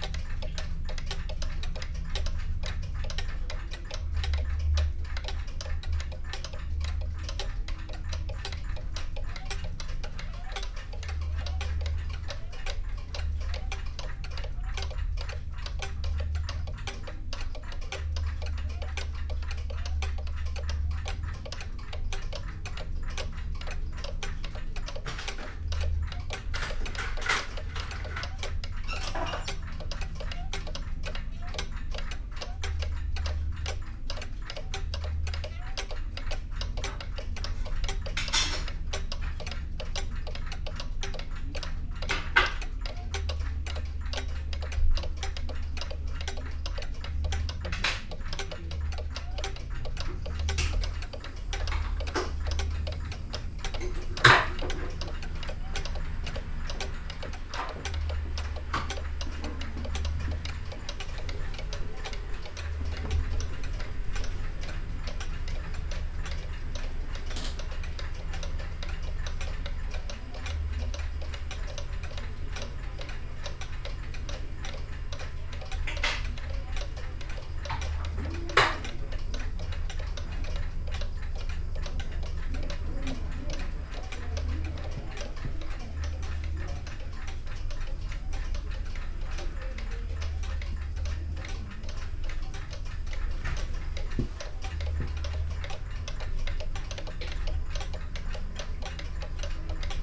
clockmaker, gosposka ulica, maribor - measuring time
working space of the clock master Jože Vidovič, old clocks